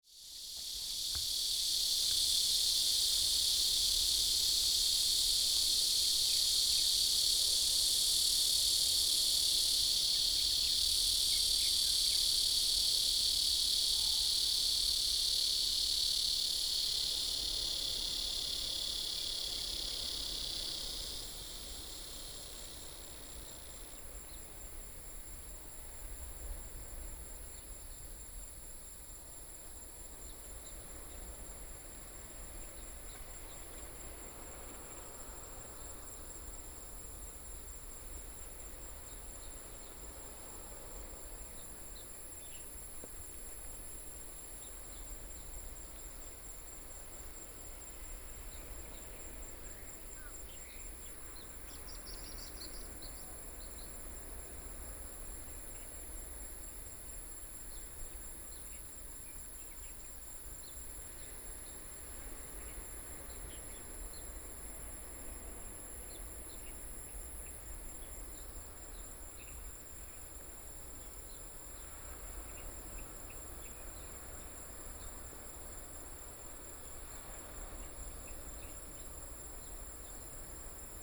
蘇澳鎮存仁里, Yilan County - In the woods
In the Waterfowl Sanctuary, Hot weather, Birdsong sound, Small village, Cicadas sound, Sound of the waves